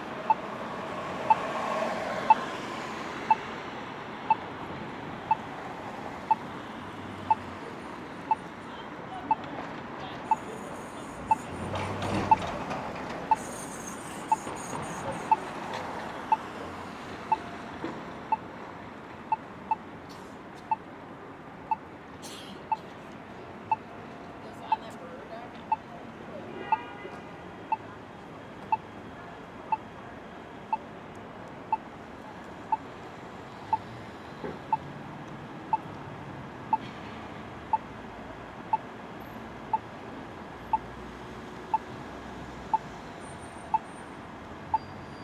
An audible crosswalk signal, NYC.